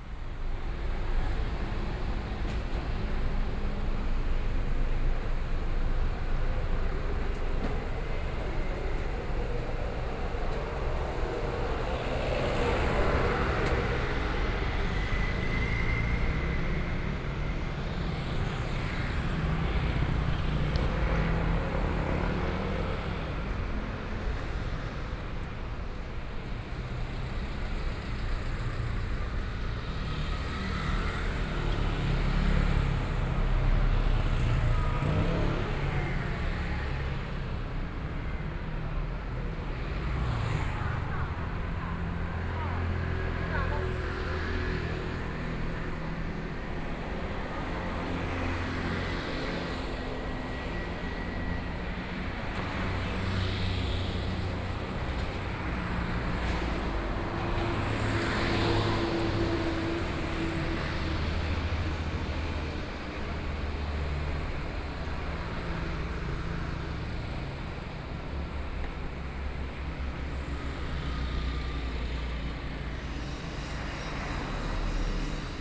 Shenghou St., Yilan City - Walking in the street
Walking in the street, Traffic Sound, Hot weather
Sony PCM D50+ Soundman OKM II